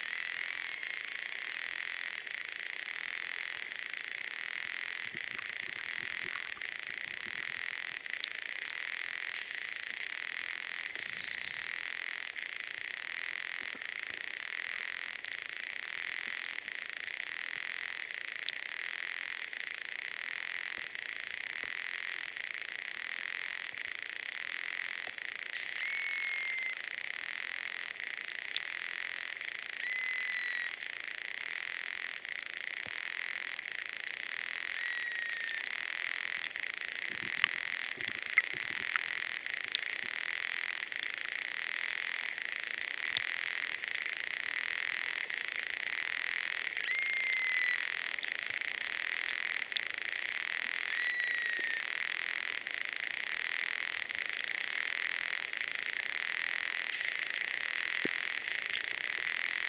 hydrophone recording in Mooste lake #2: Estonia